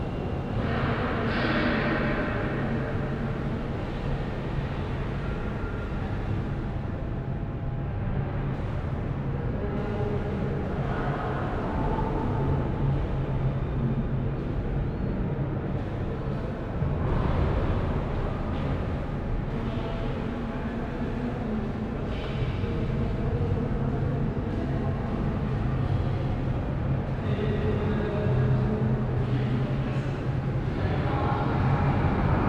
Altstadt, Düsseldorf, Deutschland - Düsseldorf, art academy, first floor
Inside the classical building of the Düsseldorf art academy in the hallway of the first floor. The sound of steps, voices and transportation reverbing from the long and high stone walls.
This recording is part of the exhibition project - sonic states
soundmap nrw - topographic field recordings, social ambiences and art places